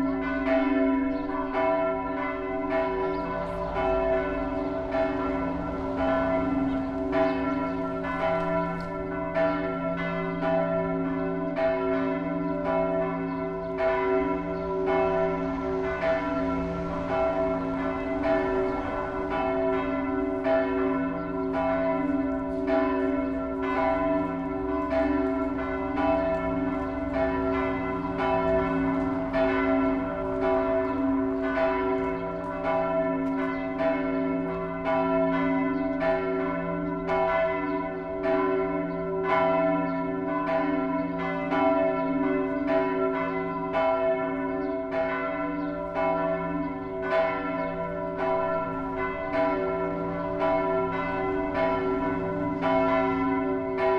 Bugården, Bergen, Norge - The Bells of Mariekirken
Went by the church and heard the bells loud and clear.
It was a beautiful sunny day, with almost no wind.
A lot of the locals and turist alike walking arround in the city.
Recorded with a Sony PCM D100 about 50 meters from the belltower.
sadly there is some traffic and other noice on the recording, but again, that is how it sounds in this spot.
Send me an email if you want it